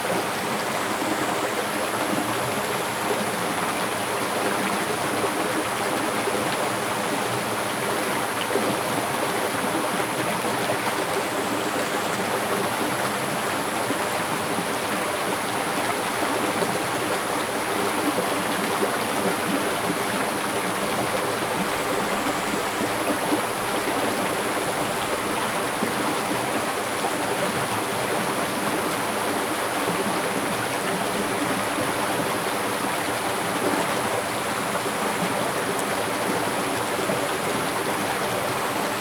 種瓜坑溪.桃米里Puli Township - In streams
The sound of the river
Zoom H2n MS+XY +Spatial audio